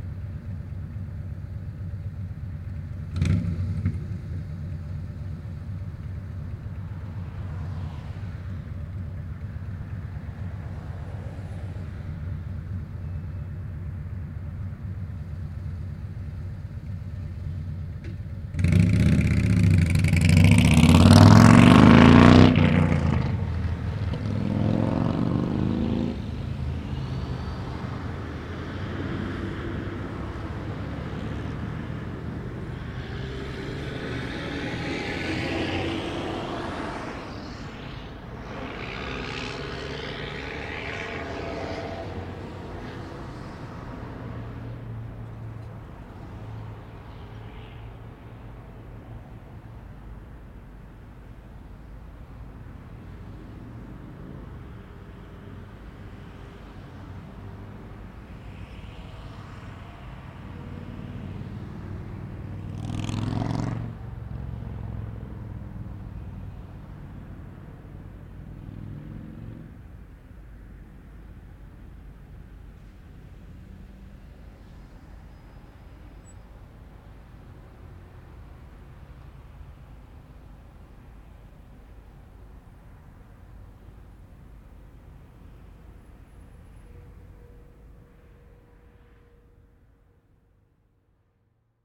An active intersection of St Francis Dr.(HWY285) and Cordova. A motorcycle comes to intersection and speeds away. Recorded with ZoomH4 and two Electro-Voice 635A/B Dynamic Omni-Directional mics.